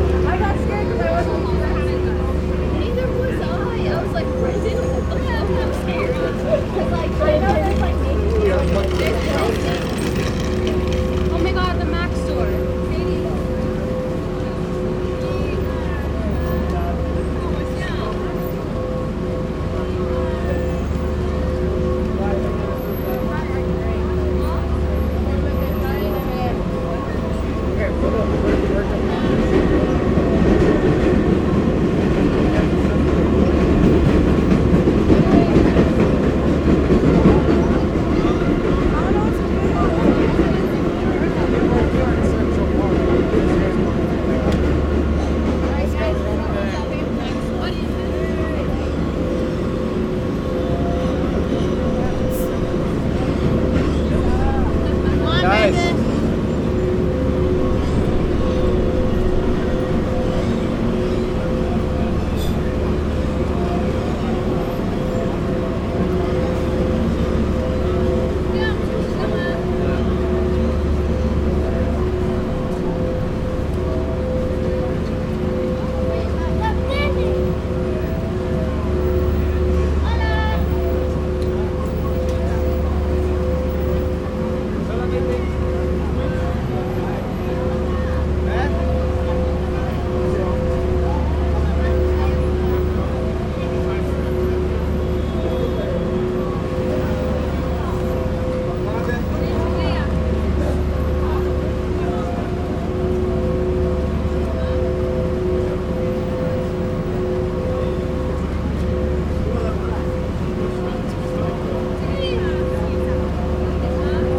West 45th Street, W 46th St, New York, NY, United States - Max Neuhaus’ Times Square Sound Installation
Max Neuhaus sound installation in Times Square.
Humming, sounds of tourists and the subway.
Zoom h6
New York, USA, August 2019